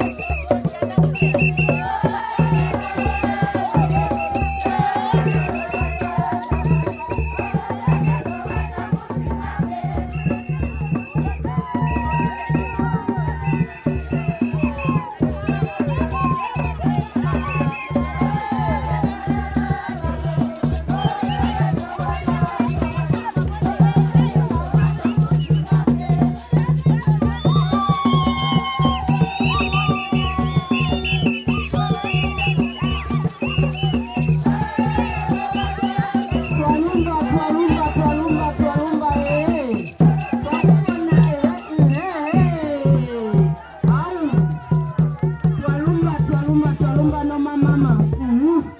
Freedom Square, Binga, Zimbabwe - Women's Day Celebration
recordings from the first public celebration of International Women’s Day at Binga’s urban centre convened by the Ministry of Women Affairs Zimbabwe